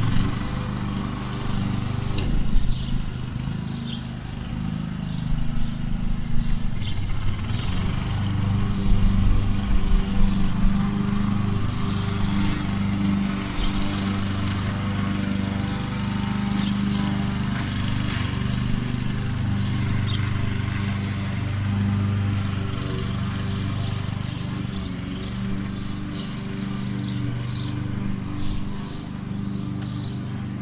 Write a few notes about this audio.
While standing near the church of Rencurel, a biker is passing by. Church bells are ringing and a local decides to shorten the grass. (Recorded with ZOOM 4HN)